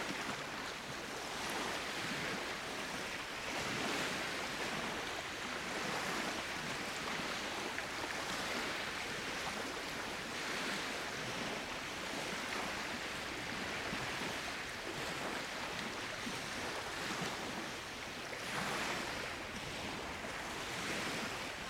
waves of Nida, lagoon soft waves
waves of Nida water sounds